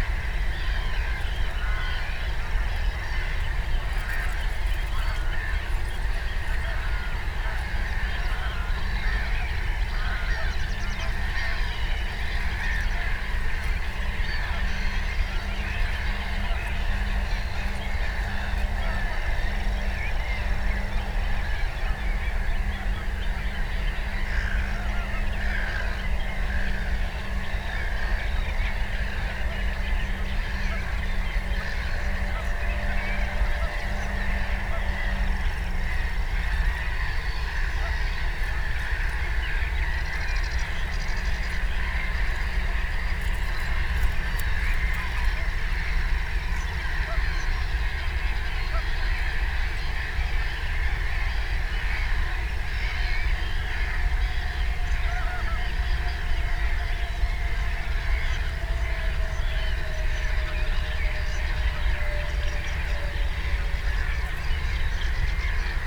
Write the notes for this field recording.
cries of all kinds of birds, the city, the country & me: june 29, 2015